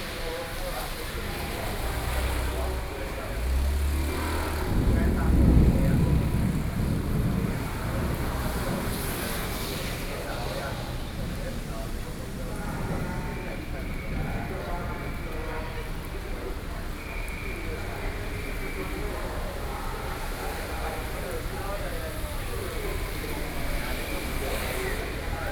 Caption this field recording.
Traffic Noise, Sound of conversation among workers, Community broadcasting, Sony PCM D50, Binaural recordings